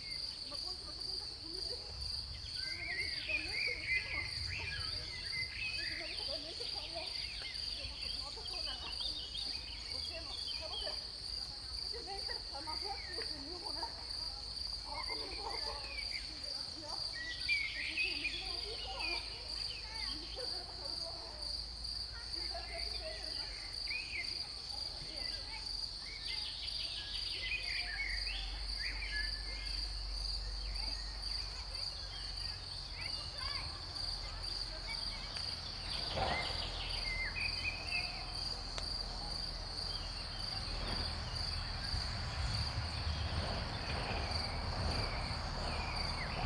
Maribor, Vinarje - after the rain
small valley, after the rain, crickets, birds, distant thunder, voices of nearby houses, a car.
(SD702, AT BP4025)
30 May, ~19:00